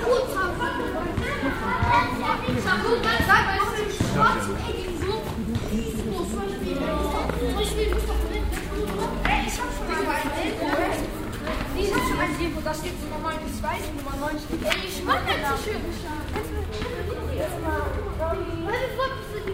velbert, friedrichstrasse, fussgängerpassage - velbert, friedrichstrasse, fussgaengerpassage
sonntägliche spaziergänger, kinder kicken ball auf kopfsteinpflster im hintergrund gesänge in kirche
soundmap nrw: social ambiences/ listen to the people - in & outdoor nearfield recordings